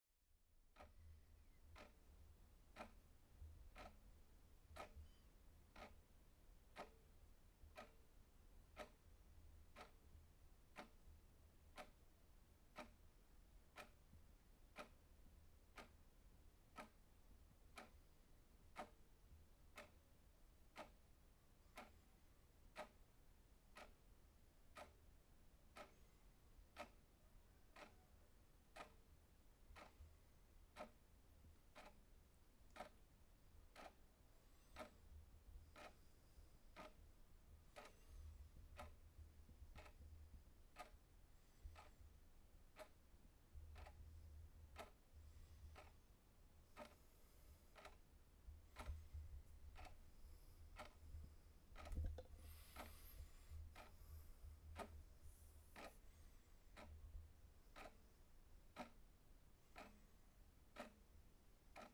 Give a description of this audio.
On the second floor, Clock sound, Zoom H6 M/S